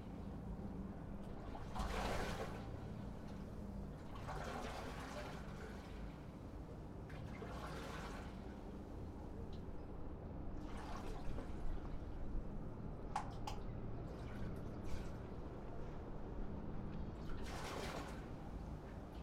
Helsinki, Finland - Port of Helsinki waves